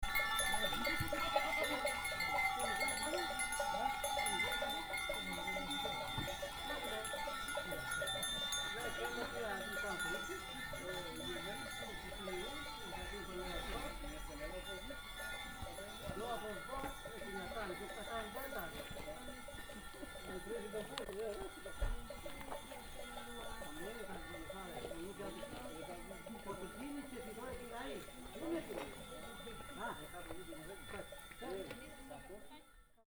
{
  "title": "Gllavë, Tepelenë, Albania - Kettle arriving back home at a mountain village",
  "date": "2009-07-05 19:30:00",
  "description": "Cow bells, sheep, voices of farmers, footsteps. Binaural recording.",
  "latitude": "40.50",
  "longitude": "19.98",
  "altitude": "890",
  "timezone": "Europe/Tirane"
}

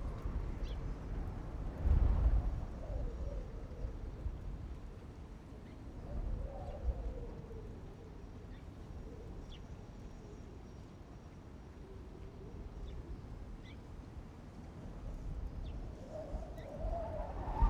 {
  "title": "菜園海洋牧場遊客中心, Magong City - Wind",
  "date": "2014-10-23 10:28:00",
  "description": "Wind, In the parking lot\nZoom H6+Rode NT4",
  "latitude": "23.55",
  "longitude": "119.60",
  "altitude": "4",
  "timezone": "Asia/Taipei"
}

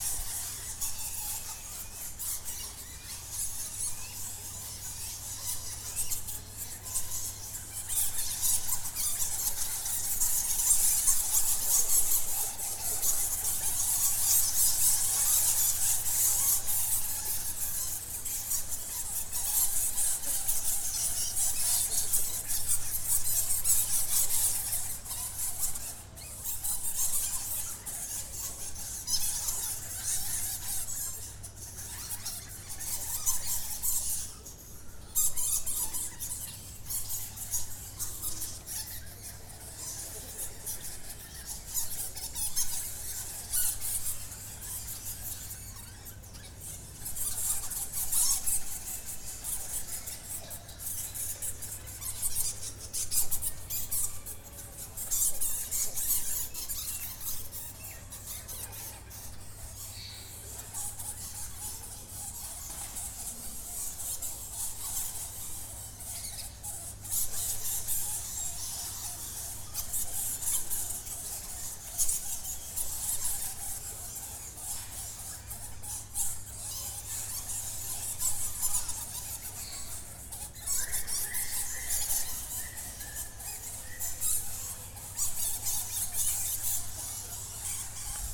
{
  "title": "Khirki, New Delhi, Delhi, India - BATS close",
  "date": "2008-12-17 14:21:00",
  "description": "Recording of swarms of bats in an old mosque.",
  "latitude": "28.53",
  "longitude": "77.22",
  "altitude": "231",
  "timezone": "Asia/Kolkata"
}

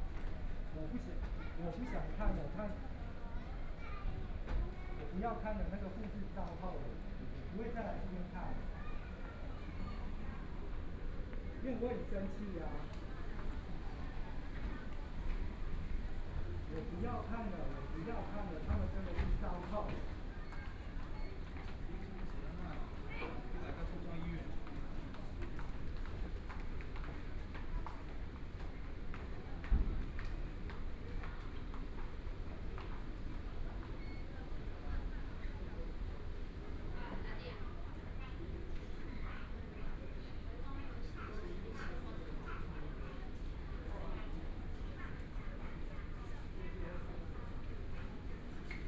{
  "title": "Mackay Memorial Hospital, Taipei City - in the hospital",
  "date": "2014-01-20 17:03:00",
  "description": "in the hospital, Binaural recordings, Zoom H4n+ Soundman OKM II",
  "latitude": "25.06",
  "longitude": "121.52",
  "timezone": "Asia/Taipei"
}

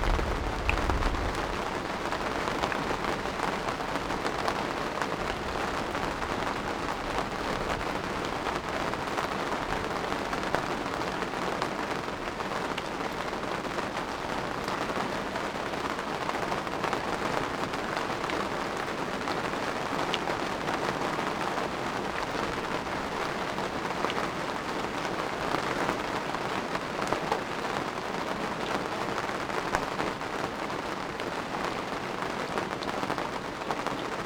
{
  "title": "Chapel Fields, Helperthorpe, Malton, UK - inside poly tunnel ... outside thunderstorm ...",
  "date": "2018-07-27 21:20:00",
  "description": "inside poly tunnel ... outside thunderstorm ... mics through pre amp in SASS ... background noise ...",
  "latitude": "54.12",
  "longitude": "-0.54",
  "altitude": "77",
  "timezone": "Europe/London"
}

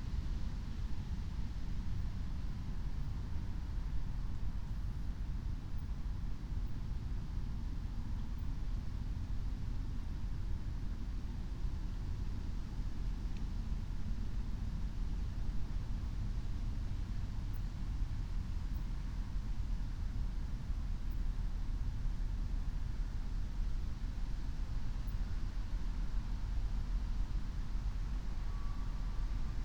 {
  "date": "2021-08-31 04:00:00",
  "description": "04:00 Berlin, Alt-Friedrichsfelde, Dreiecksee - train junction, pond ambience",
  "latitude": "52.51",
  "longitude": "13.54",
  "altitude": "45",
  "timezone": "Europe/Berlin"
}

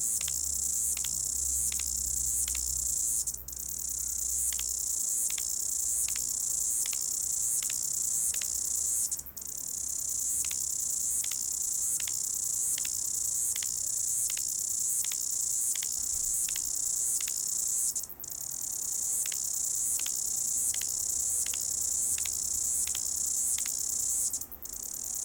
{
  "title": "Trevor Terrace, Newtown, Wellington, New Zealand - Cicada",
  "date": "2015-03-04 20:01:00",
  "latitude": "-41.31",
  "longitude": "174.78",
  "altitude": "58",
  "timezone": "Pacific/Auckland"
}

